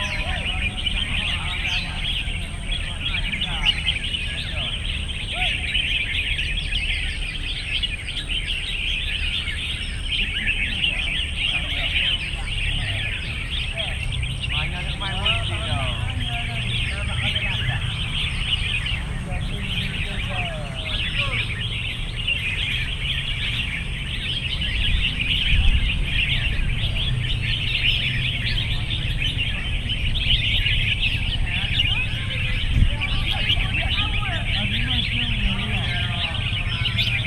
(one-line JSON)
{"title": "Kota Bharu, Kelantan, Malaysia - Walk to Friday Bird Singing Contest", "date": "2014-04-04 07:30:00", "description": "Excerpts from a walk along Jl Kebun Sultan and Jl Sri Cemerlang to the park where weekly Bird Singing Contests are held", "latitude": "6.13", "longitude": "102.25", "altitude": "8", "timezone": "Asia/Kuala_Lumpur"}